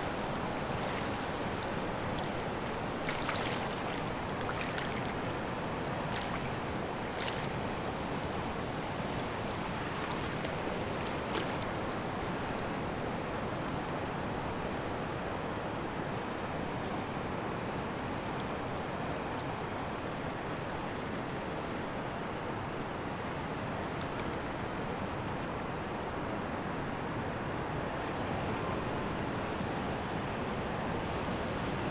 Praia Magoito, Sintra, Portugal, waves on rocks
waves breaking on the rocks, Praia do Magoito, Ericeira, Sintra, water